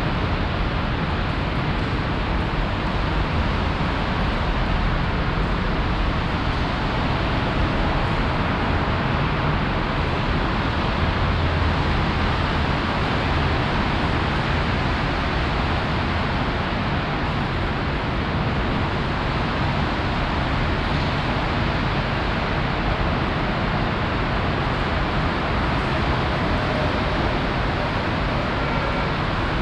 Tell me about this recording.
Inside a former church, that has been gutted and opened on the wallside for a complete reconstruction. The sound of traffic from the nearby highway and rain dripping on and in the building. This recording is part of the exhibition project - sonic states, soundmap nrw - sonic states, social ambiences, art places and topographic field recordings